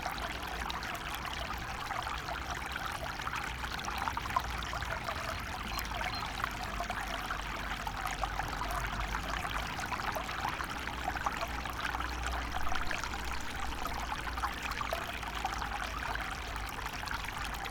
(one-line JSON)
{"title": "Mestni park, Maribor - water inflow, pond", "date": "2017-03-31 15:30:00", "description": "Maribor, Mestni park, water flows from a small canal into a pond\n(Son PCM D50 inernal mics)", "latitude": "46.56", "longitude": "15.65", "altitude": "283", "timezone": "Europe/Ljubljana"}